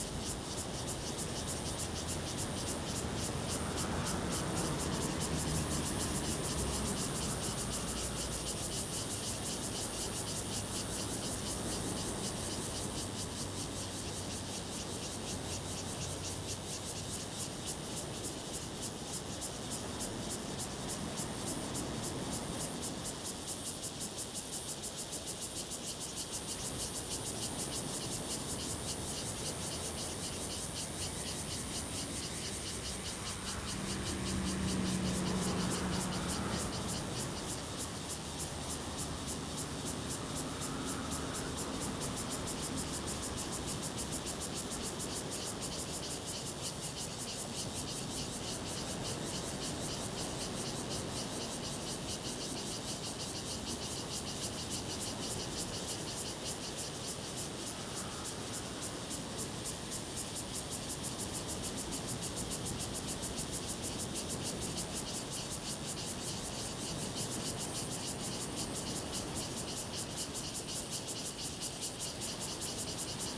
金樽遊憩區, Donghe Township - Cicadas sound
Cicadas sound, Sound of the waves, Traffic Sound
Zoom H2n MS +XY
Donghe Township, 花東海岸公路113號, 8 September 2014